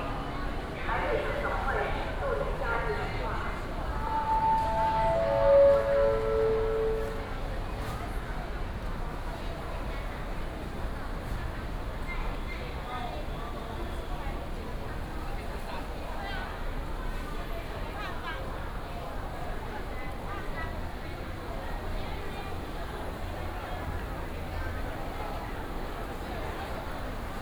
建國假日花市, Taipei City - Walking through the Flower Market
Flower Market, In the Viaduct below, Traffic noise
Taipei City, Taiwan, 27 June 2015, 17:54